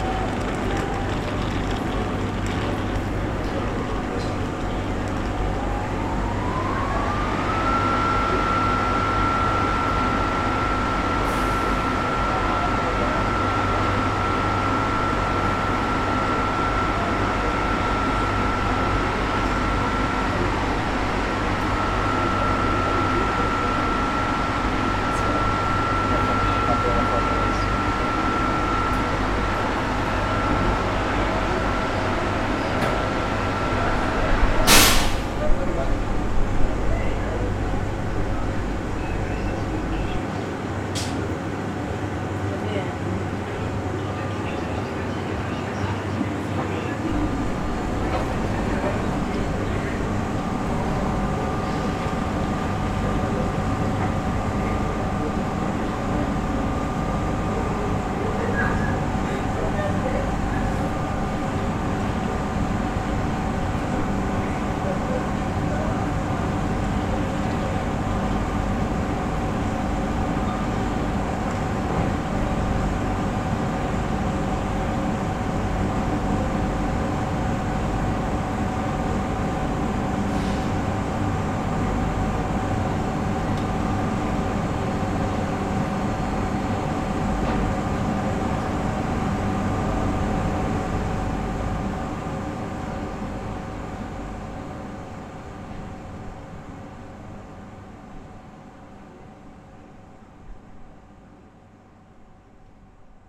QuaiB de la Gare, Grenoble, France - Sur le quai
En attendant le train qui a du retard, beaucoup de monde sur le quai.